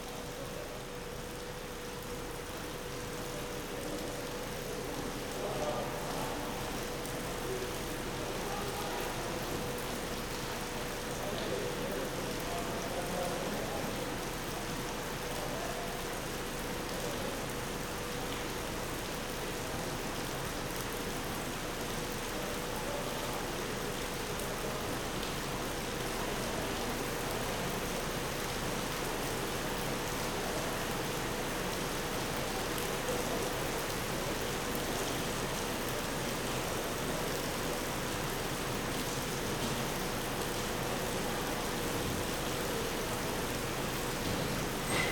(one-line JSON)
{"title": "Gyumri, Arménie - Gyumri railway station", "date": "2018-09-09 19:00:00", "description": "Into the Gyumri station, rain falling. A train is coming from Erevan and is going to Batumi (Georgia) and after, the Gyumri-Erevan train is leaving. Into the Gyumri station, announcements are loud, and the time is very-very long ! Everything is slow. It's a forbidden sound. The station master went 4 times to see me and was aggressive. At the end, I had to leave.", "latitude": "40.79", "longitude": "43.86", "altitude": "1547", "timezone": "Asia/Yerevan"}